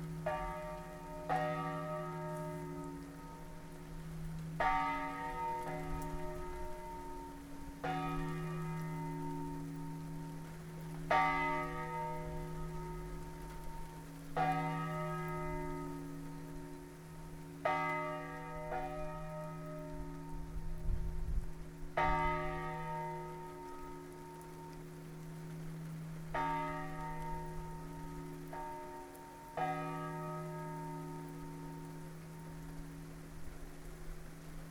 campane di poffabro con la pioggia a ottobre (ROMANSOUND) edirol
Frisanco Pordenone, Italy, 17 October 2010, 12:00